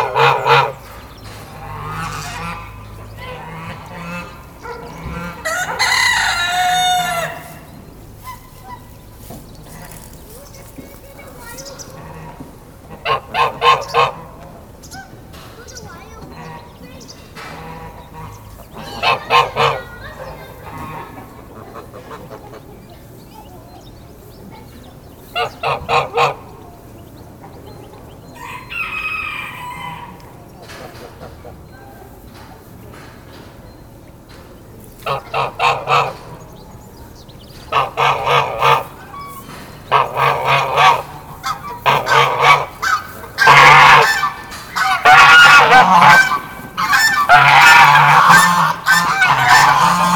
Via 1° Maggio, Bernate VA, Italia - La comunita di oche